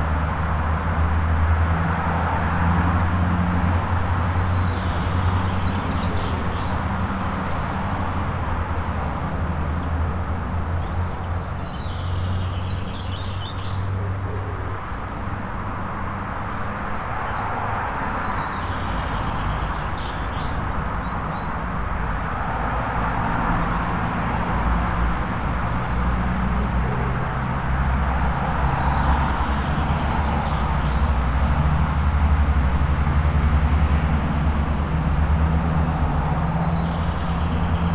Portland, Dorset, UK - Old Hill footpath
South West England, England, United Kingdom, 28 April 2013